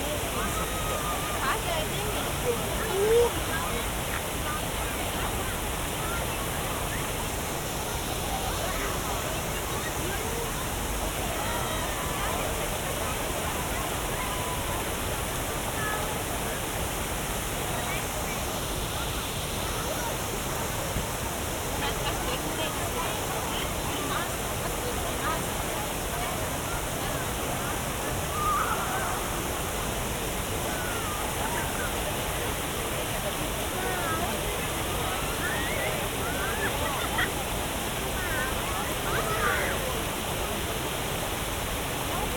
Kuldīga, waterfall, Latvia
Well known river Venta waterfall in Kuldiga. The place overcrowded with people.
Kurzeme, Latvija, 11 July